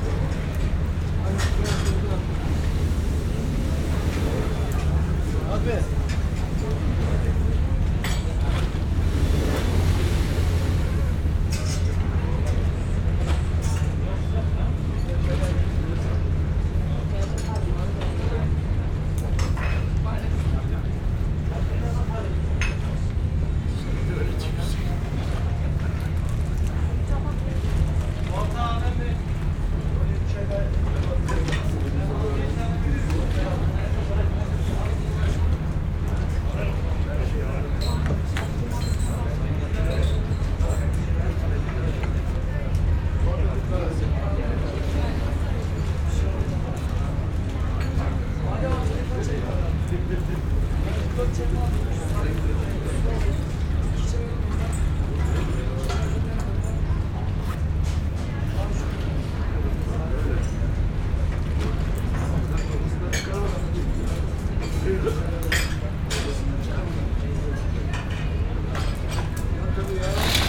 cafe at Kabatas, Istanbul
sounds of the cafe at Kabatas port, Istanbul